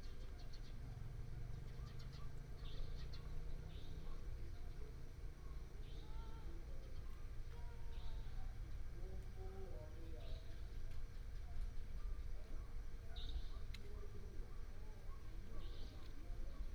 站前路, Taimali Township - Square outside the station
Square outside the station, Station Message Broadcast, Traffic sound, gecko, Dog barking, People walking in the square, birds sound